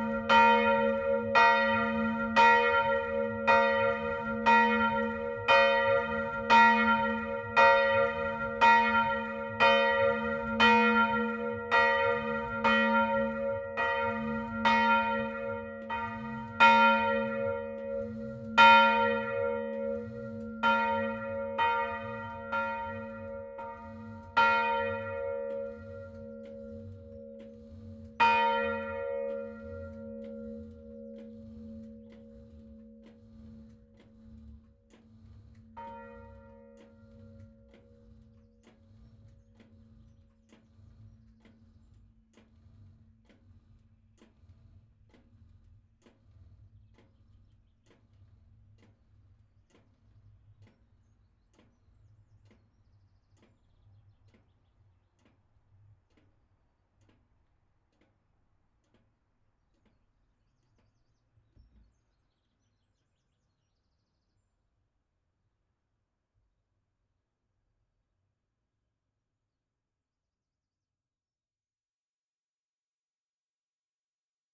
Escautpont US, Escautpont, France - Escautpont (Nord) - église St-Armand
Escautpont (Nord)
église St-Armand
Volée cloche grave